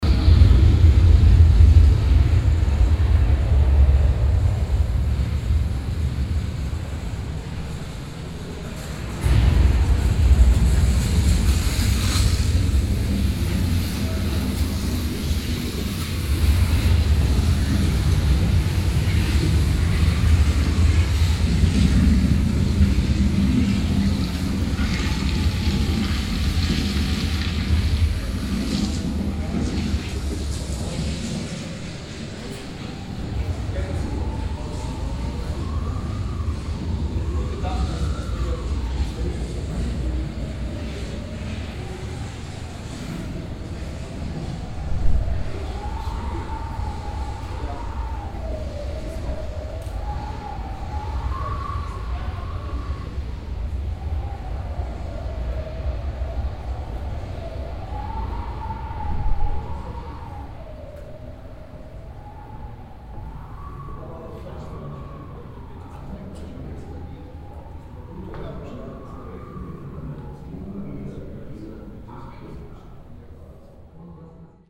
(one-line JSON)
{"title": "völklingen, völklinger hütte, ferrodrom", "description": "ehemaliges Eisenverhüttungswerk, nun weltkulturerbe, hier klänge der elemente nachgebildet im science center ferrodrom\nsoundmap d: social ambiences/ listen to the people - in & outdoor nearfield recordings", "latitude": "49.25", "longitude": "6.84", "altitude": "201", "timezone": "GMT+1"}